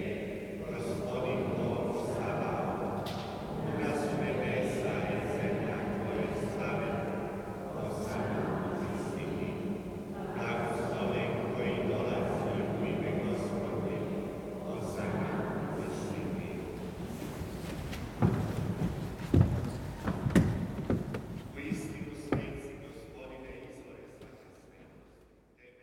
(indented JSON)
{
  "title": "Sankt Sebastian, Ackerstraße, Wedding, Berlin, Deutschland - St. Sebastian, Ackerstraße, Berlin - Croatian mass",
  "date": "2006-01-10 17:04:00",
  "description": "St. Sebastian, Ackerstraße, Berlin - Croatian mass. Priest and believers. [I used an MD recorder with binaural microphones Soundman OKM II AVPOP A3]",
  "latitude": "52.54",
  "longitude": "13.38",
  "altitude": "40",
  "timezone": "Europe/Berlin"
}